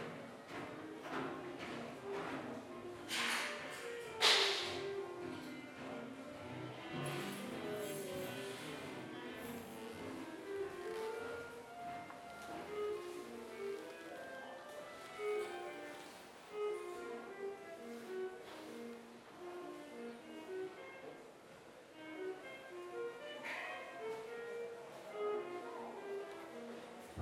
L'Aquila, Conservatorio 'A. Casella' - 2017-05-29 14-Conservatorio
L'Aquila AQ, Italy, 29 May 2017, 17:25